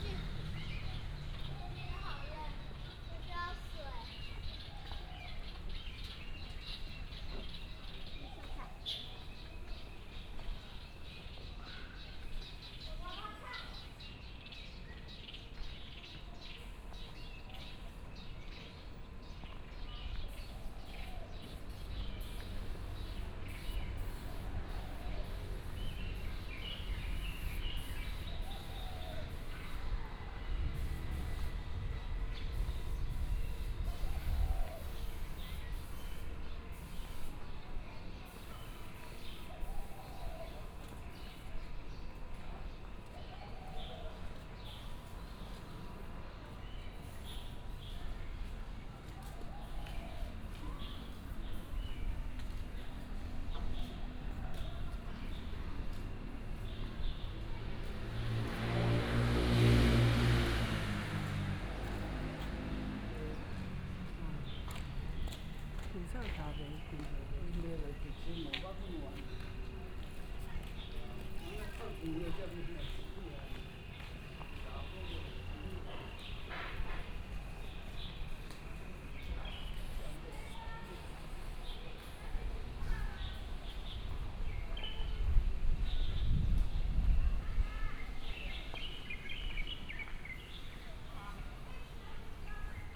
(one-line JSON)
{"title": "Minde Park, Zhonghe Dist. - Walking through the park", "date": "2017-04-30 17:22:00", "description": "Walking through the park, sound of the birds, traffic sound, Child", "latitude": "25.00", "longitude": "121.47", "altitude": "20", "timezone": "Asia/Taipei"}